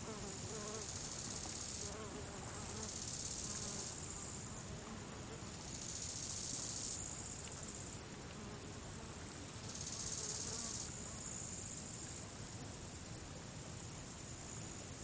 Kastna Tammik seashore
insect life by the seashore
18 July